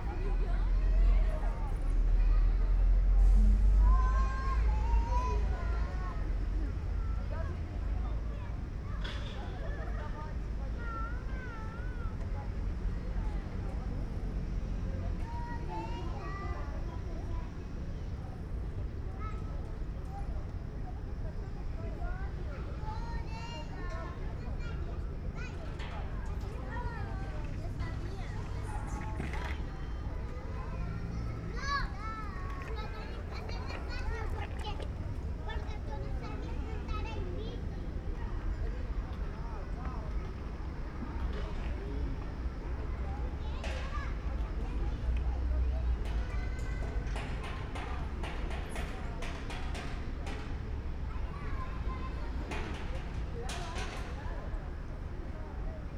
Las Palmas, Gran Canaria, at childrens playground
Las Palmas, Spain, 23 January 2017